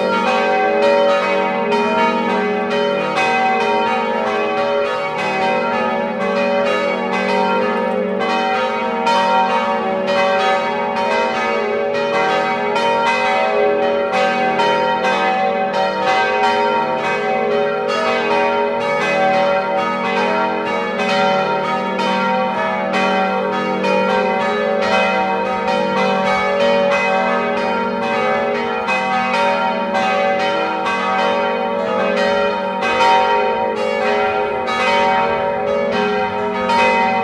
The church bells at the Mother Gods Procession day.